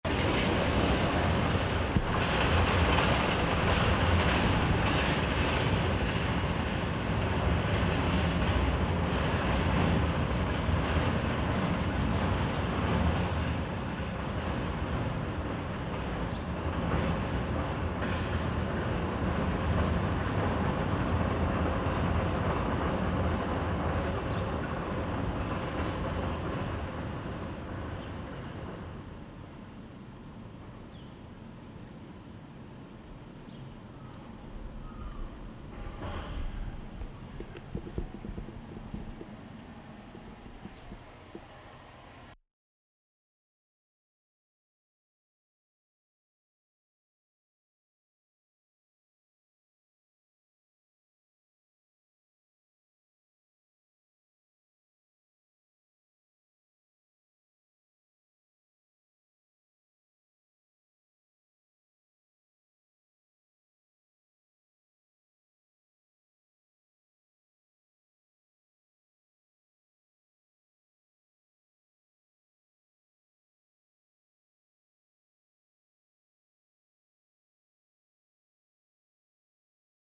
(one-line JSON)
{"title": "Rue Philippe de Girard, Paris, France - Rails de la gare de l'est", "date": "2021-04-03 13:52:00", "description": "Voici un enregistrement d'un train passant sous les rails de la gare de l'Est, le son est réverbé par la voute de la place Jan Karski", "latitude": "48.88", "longitude": "2.36", "altitude": "54", "timezone": "Europe/Paris"}